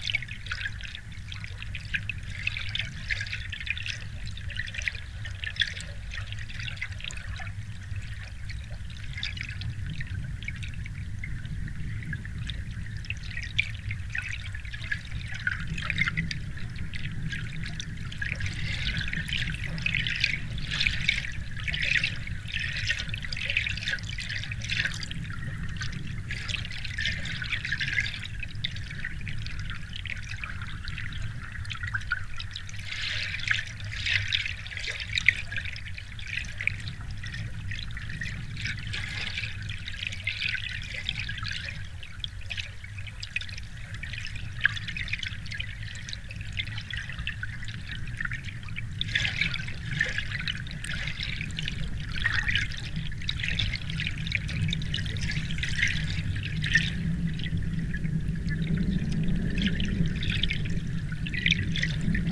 Vasaknos, Lithuania, underwater
Hydrophone right at the bridge
Utenos apskritis, Lietuva, 7 November 2020